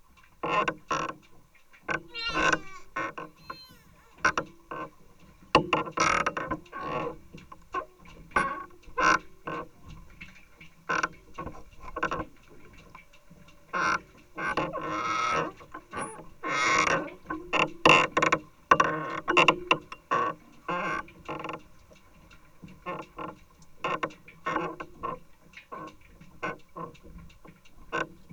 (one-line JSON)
{"title": "Lithuania, Paluse, pontoon", "date": "2012-09-09 16:45:00", "description": "contact microphone between the planks of a pontoon...strangely, it also has captured a voice of my 7 months old son:)", "latitude": "55.33", "longitude": "26.10", "altitude": "138", "timezone": "Europe/Vilnius"}